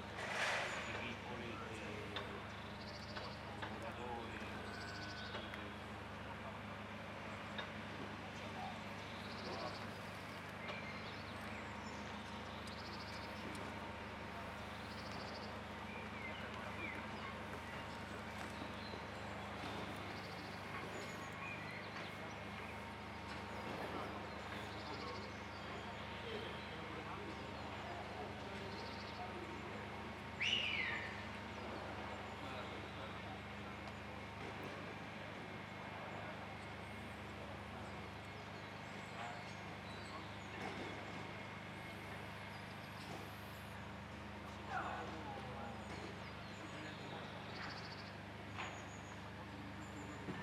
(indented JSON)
{
  "title": "L'Aquila, San Bernardino - 2017-05-29 03-S.Bernardino",
  "date": "2017-05-29 13:15:00",
  "latitude": "42.35",
  "longitude": "13.40",
  "altitude": "720",
  "timezone": "Europe/Rome"
}